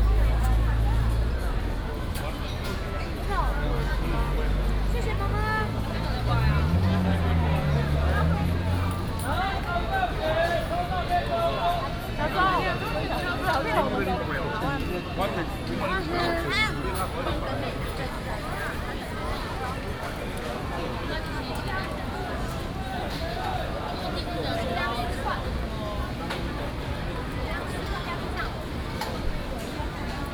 Ai 4th Rd., Ren’ai Dist., Keelung City - Walking through the night market
Various shops sound, walking in the Street, night market